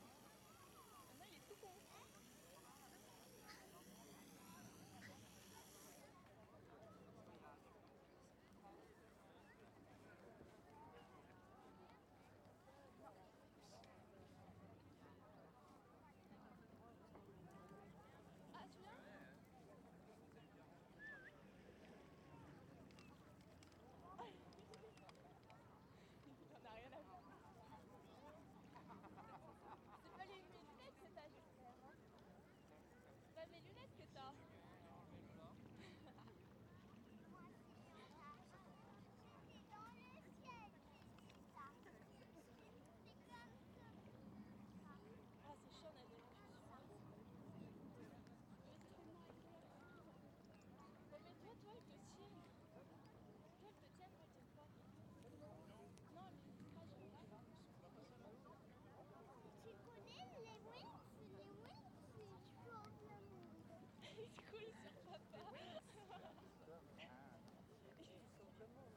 Oyonnax, France - Lac Genin (Oyonnax - Ain)
Lac Genin (Oyonnax - Ain)
Dernier week-end avant la rentrée scolaire
Le soleil joue avec les nuages, la température de l'eau est propice aux baignades
la situation topographique du lac (dans une cuvette) induit une lecture très claire du paysage sonore.
ZOOM F3 + Neuman KM184
28 August 2022, ~12:00